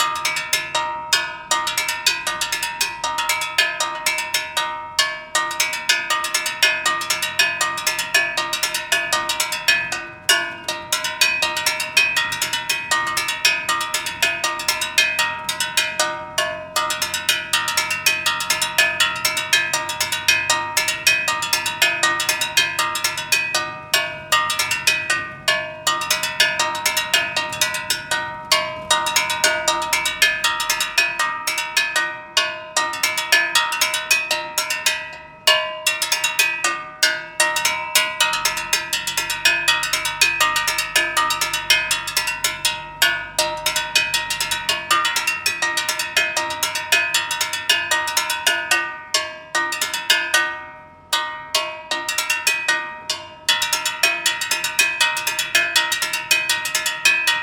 Plaza Echaurren - Gas Seller Percussion
Gas Seller are doing percussion at the back of the truck to announce he is passing by.
Recorded by a MS Schoeps CCM41+CCM8
Región de Valparaíso, Chile, December 2015